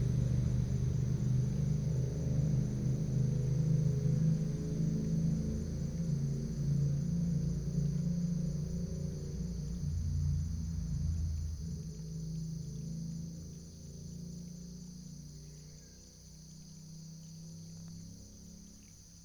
新庄隧道, Shitan Township - motorcycle
Near the tunnel entrance, Next to the road, Holiday early morning, Very heavy locomotives on this highway, Cicadas call, Binaural recordings, Sony PCM D100+ Soundman OKM II
Shitan Township, Miaoli County, Taiwan, 24 September 2017